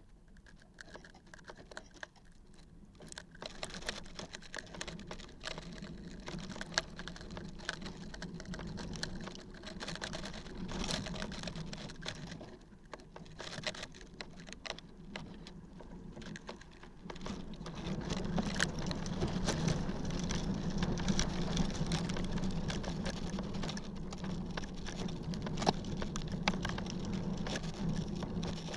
recorded with contact microphones. found object: piece of cardboard in wind